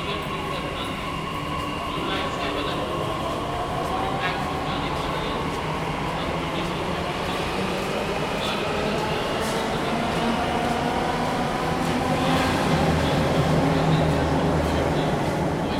Rajiv Chowk, Connaught Place, New Delhi, India - (-34) Rajiv Chowk Metro Station
Rajiv Chowk Metro Station; platform atmosphere
sound posted by Katarzyna Trzeciak
6 February 2016, 14:17